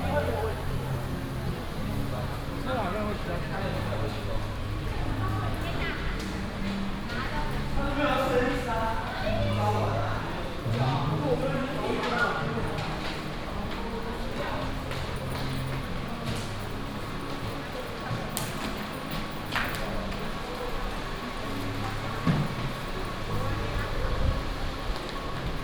New Taipei City, Taiwan - in the station underground hall
Start walking from the square, To the station underground hall, Go to the MRT station